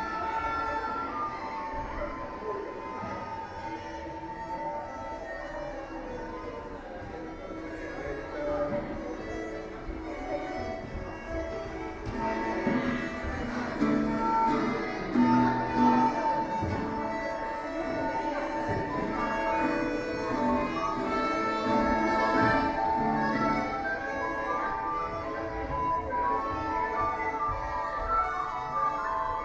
{"title": "Vigala manor, tuning folk instruments", "date": "2010-08-13 17:33:00", "description": "(binaural soundwalk) folk music camp for youth, ambience of soundcheck and tuning for final performance", "latitude": "58.78", "longitude": "24.25", "timezone": "Europe/Tallinn"}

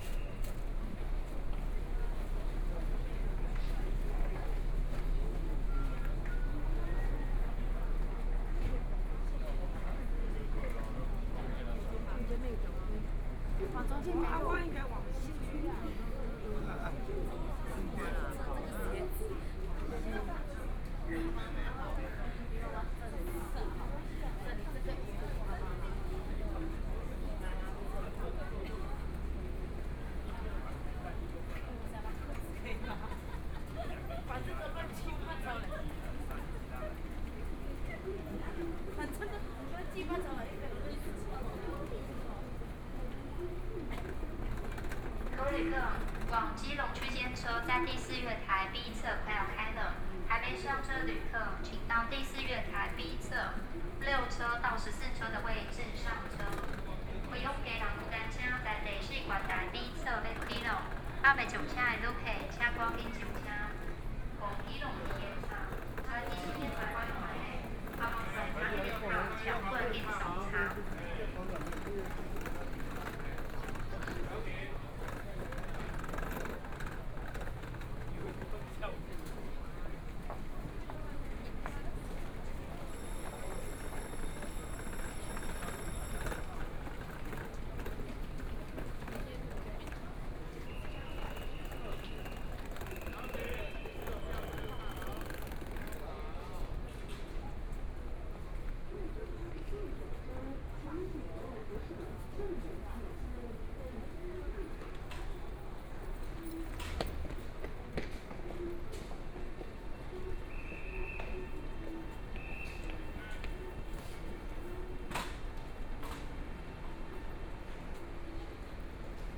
{"title": "Taipei Main Station, Taiwan - soundwalk", "date": "2014-02-24 20:59:00", "description": "From the train station platform, Direction to MRT station, walking in the Station\nPlease turn up the volume\nBinaural recordings, Zoom H4n+ Soundman OKM II", "latitude": "25.05", "longitude": "121.52", "timezone": "Asia/Taipei"}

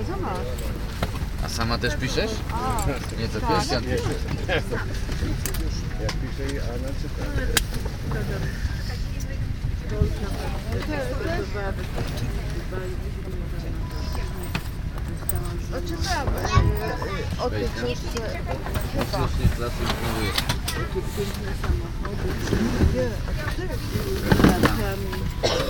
{"title": "Airport, Alicante, Spain - (03 BI) Leaving Airplane", "date": "2016-11-03 00:05:00", "description": "Binaural recording of a leaving an airplane and going through airfield to airport buildings.\nRecorded with Soundman OKM on Zoom H2n", "latitude": "38.28", "longitude": "-0.55", "altitude": "29", "timezone": "Europe/Madrid"}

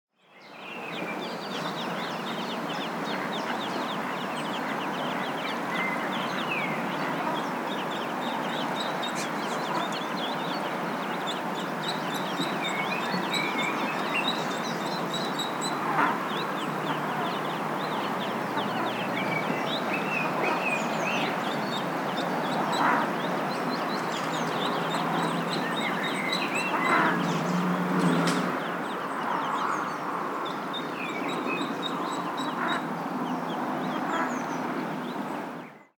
{"title": "Walking Holme Mill Pond", "date": "2011-04-19 16:15:00", "description": "Ducks and ducklings", "latitude": "53.56", "longitude": "-1.80", "altitude": "170", "timezone": "Europe/London"}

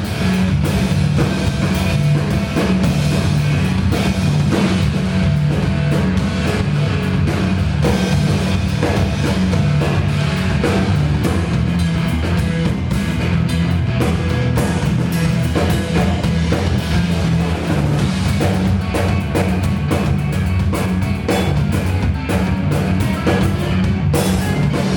{"title": "John Makay playing in Improbable concert Place Lorette / Marseille", "date": "2011-01-29 23:00:00", "description": "concert by the duo guitar/drum John Makay _ organised by Limprobable in my working place.", "latitude": "43.30", "longitude": "5.37", "altitude": "36", "timezone": "Europe/Paris"}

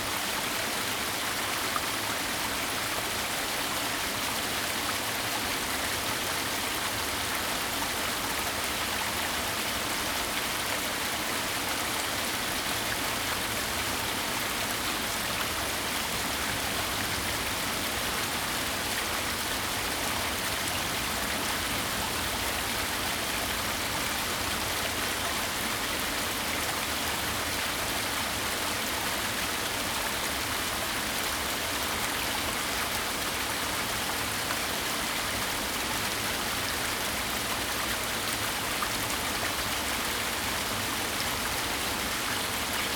{"title": "Wufeng Rd., Jiaoxi Township, Yilan County - Slope of the cascade", "date": "2016-12-07 09:23:00", "description": "Slope of the cascade, Waterfalls and rivers\nZoom H2n MS+ XY", "latitude": "24.83", "longitude": "121.75", "altitude": "145", "timezone": "GMT+1"}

